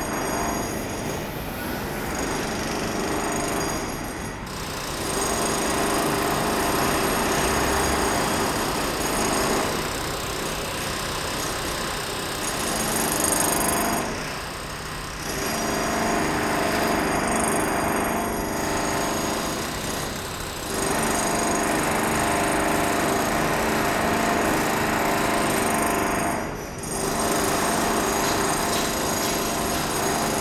Knokke-Heist, Belgique - Construction site
Very heavy works in a construction site. All the coast is concreted. Nearly all buildings, coming from the seventies, have the same problems. This explains there's a lot of renovation works in the same time.
Knokke-Heist, Belgium, 15 November 2018